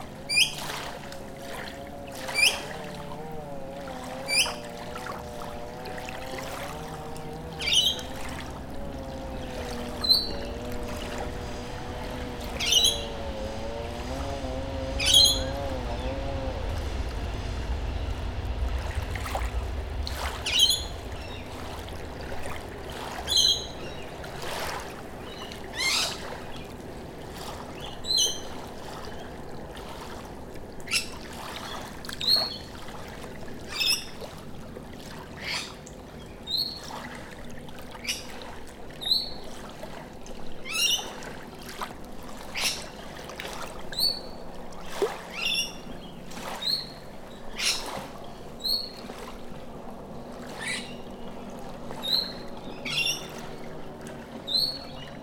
Como, NSW, Australia - Winters afternoon by the suburban mangroves
Waves softly lap, people trim their lawns in the distance, motorboats rush across the water, Rainbow Lorikeets and Noisy Miners call in the surrounding trees, someone listens to music in their garage, trains drone from above.
Recorded with a pair of AT4022's placed on a log + Tascam DR-680.